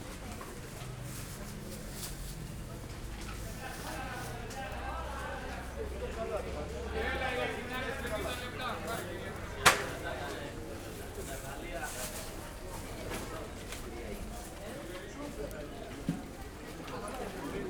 market day at Kallidromiou street, a friendly place, fruit and food sellers sind sometimes and communicate accross their stands. Short walk along the market course.
(Sony PCM D50, DPA4060)